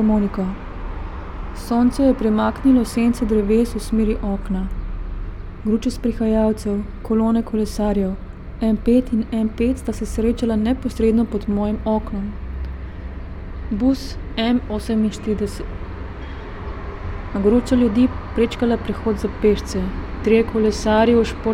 writing reading window, Karl Liebknecht Straße, Berlin, Germany - may 19 2013, 10:41
10:41 / 19. maj 2013
Dva psa, iste pasme, moški in ženska s povodcem. Trije kolesarji, eden ima pripeto prikolico za otroka. Vozeči šotor. Dva dečka, iste svetlo modre majčke in kratke hlače ter bela klobuka. Eden stoji na obodu travnate formacije. Bus TXL s harmoniko. Sonce je premaknilo sence dreves v smeri okna. Gruče sprehajalcev, kolone kolesarjev. M5 in M5 sta se srečala neposredno pod mojim oknom. Bus M48. Gruča ljudi prečkala prehod za pešce. Trije kolesarji v športni opravi, vsi s čeladami. Vrsta kolesarjev na nasprotni strani ceste. Štirikolesnik in oranžna čelada, trije motorji. Siv avto. M4 zavija. Trije ljudje vstopajo v bel avto. Moder avto. Rdeč, oranžen, srebrn, srebrn, črn. Rumen motor.
Kolesarji se nabirajo pred semaforjem.
Srebrn vlak zgoraj z rumenimi črtami.
Senca televizijskega stolpa je bližje oknu. Sonce je delno za njegovo kuglo. Dobrodošla senca v sobi.
M črne barve.
Pešci hodijo v parih, dva in dva ali štirje.